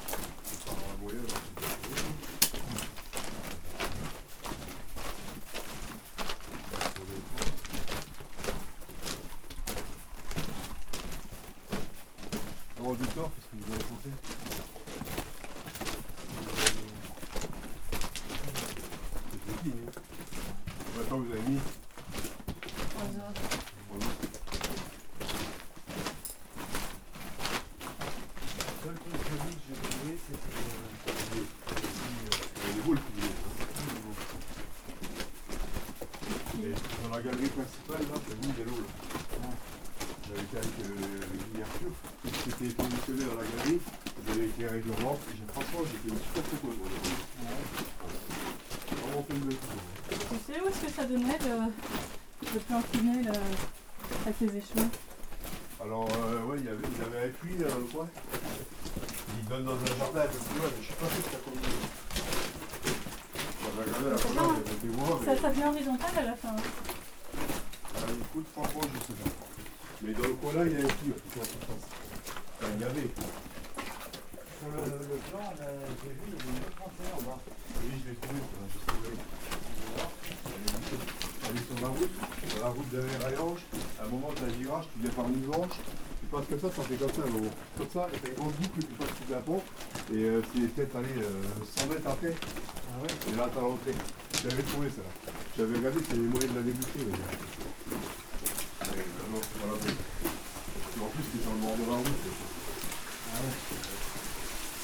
{
  "title": "Angevillers, France - Rochonvillers mine",
  "date": "2016-10-31 09:30:00",
  "description": "We are walking into the Rochonvillers underground mine, this is the main tunnel. We are crossing a place where the oxygen level is very poor. As this is dangerous, we are going fast. This is stressful. Recorded fastly while walking.",
  "latitude": "49.39",
  "longitude": "6.06",
  "altitude": "384",
  "timezone": "Europe/Paris"
}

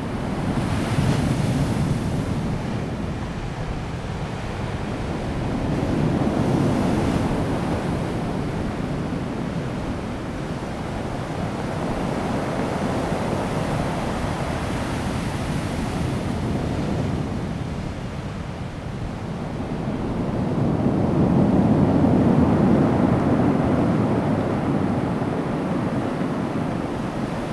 {
  "title": "南田村, Daren Township - Wind and waves",
  "date": "2018-03-23 12:06:00",
  "description": "Sound of the waves, Rolling stones, Wind and waves\nZoom H2n MS +XY",
  "latitude": "22.25",
  "longitude": "120.90",
  "timezone": "Asia/Taipei"
}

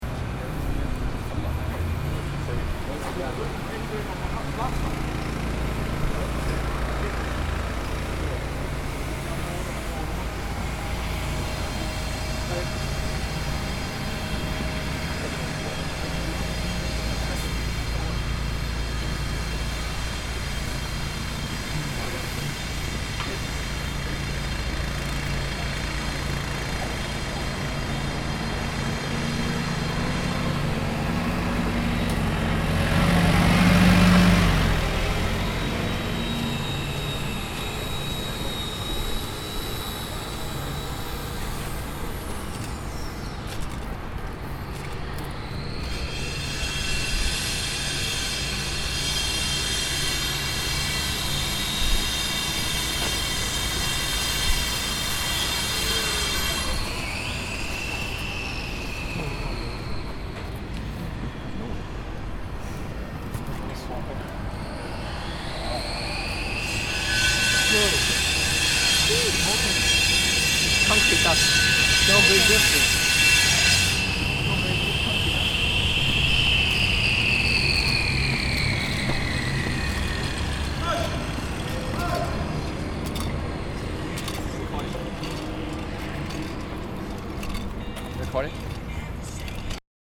{
  "title": "aotea square construction",
  "date": "2010-09-29 19:18:00",
  "description": "walking from south to north closer to the construction.",
  "latitude": "-36.85",
  "longitude": "174.76",
  "altitude": "1",
  "timezone": "Pacific/Auckland"
}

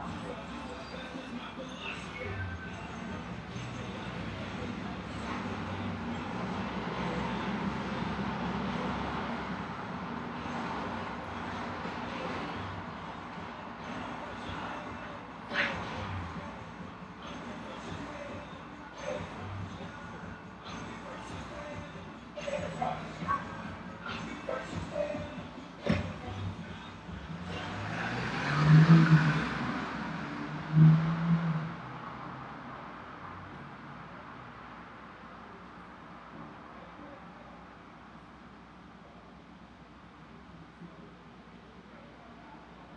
{
  "title": "Bedford-Stuyvesant, Brooklyn, NY, USA - Monday night Brooklyn street sounds",
  "date": "2013-08-12 22:19:00",
  "description": "The corner of Putnam Avenue and Classon Avenue at the border of the Bedford-Stuyvesant and Clinton Hill neighborhoods. 10pm on a Monday night in August. Street sounds, cars, stereos, bicycles, conversation, etc. Recorded on a MacBookPro",
  "latitude": "40.68",
  "longitude": "-73.96",
  "altitude": "20",
  "timezone": "America/New_York"
}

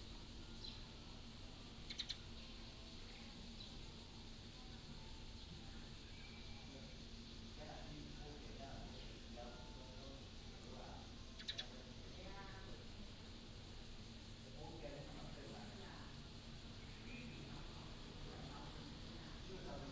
{
  "title": "北埔慈天宮, Beipu Township - In the temple",
  "date": "2017-08-30 08:46:00",
  "description": "In the temple, bird sound, Binaural recordings, Sony PCM D100+ Soundman OKM II",
  "latitude": "24.70",
  "longitude": "121.06",
  "altitude": "142",
  "timezone": "Asia/Taipei"
}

